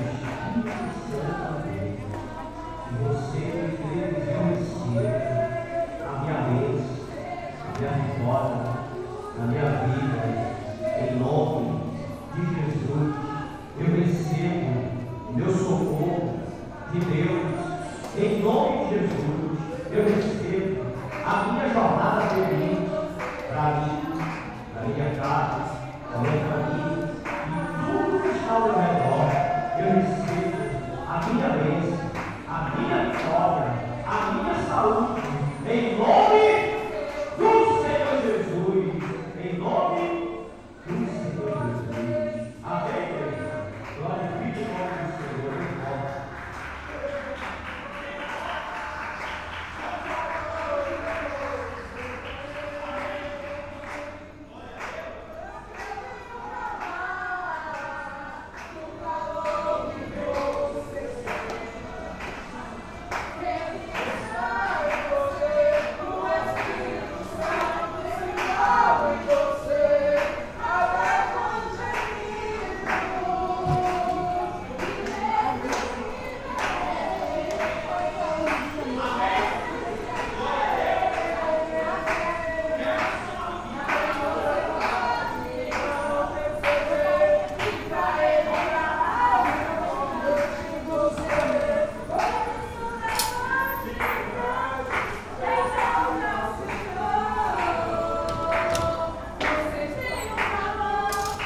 Esquina do beco da morte com a feira, Cachoeira - BA, Brasil - Duas Igrejas Evangélicas - two evangelical chuches
Numa esquina de Cachoeira às 18h ouve-se duas igrejas evangélicas, um pastor prega, a outra canta.
Two evangelical churches singing and praying.